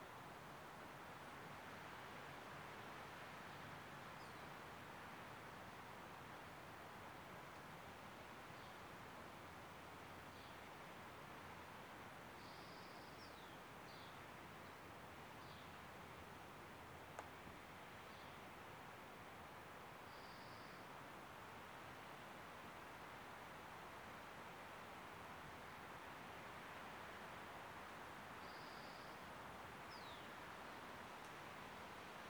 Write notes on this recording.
On a cloudless clear blue day, wind high in the trees creates a wash of white noise. It's a precursor of change -- by the time the recording is finished the sky is clouded over and threatening to rain. Major elements: * Nothing happens. And yet...